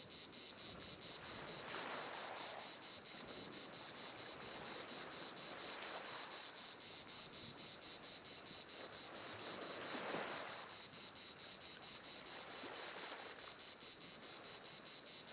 Marseille
Parc National des Calanques de Marseille-Veyre
Ambiance